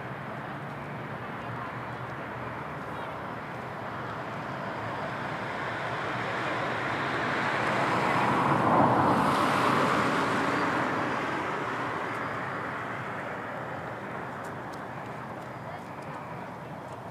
Strada Ștefan Baciu, Brașov, Romania - Winter construction works - Crane
As it was a very mild (even worryingly warm) winter, construction works on new apartment blocks restarted already. Here you can hear a crane being loaded. Not a very crowded soundscape, some cars passing by. Recorded with Zoom H2n, surround mode.